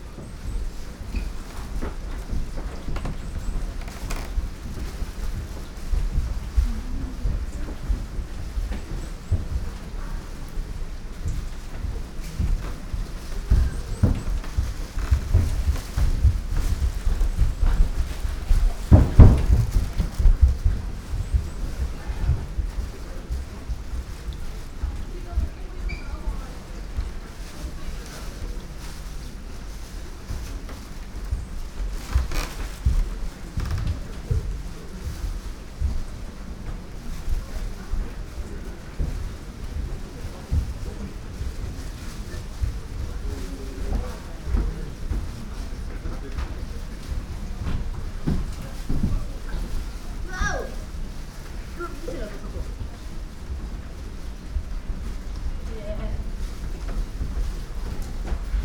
dry rock garden, Nanzenji, Kyoto - it rains, shues in plastic bags